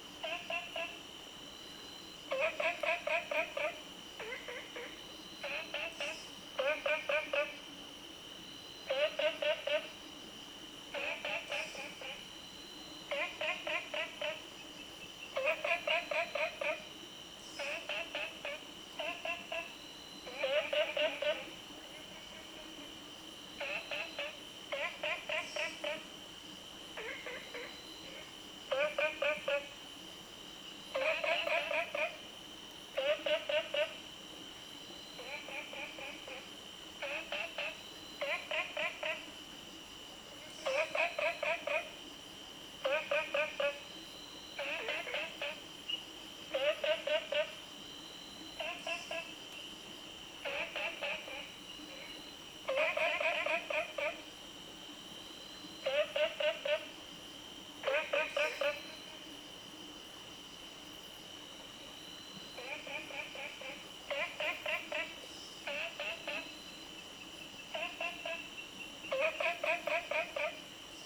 Stream, Frog Sound, On the bridge, late at night
Zoom H2n MS+XY
14 July 2016, 01:21